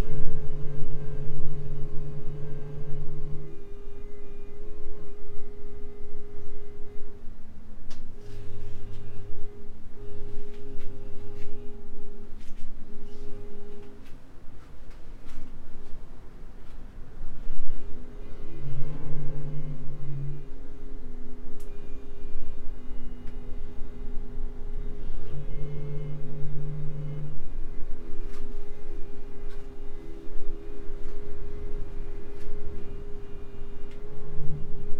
room, Novigrad, Croatia - wind instrument
room as wind instrument, with my soft contribution while opening/closing the doors, steps and thunder